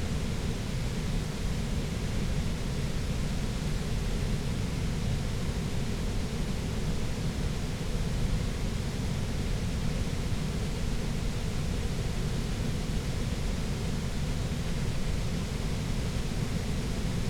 Kiekebuscher Spreewehr, Cottbus - river Spree, weir drone
river Spree, weir noise, deep drone, heard from a distance
(Sony PCM D50, Primo EM172)
Brandenburg, Deutschland, 24 August 2019, 14:15